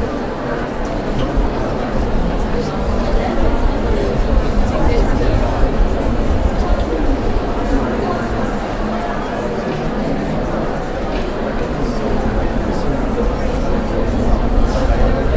soundscape at the inner yard with cafes visitors chatting
Prague 5-Old Town, Czech Republic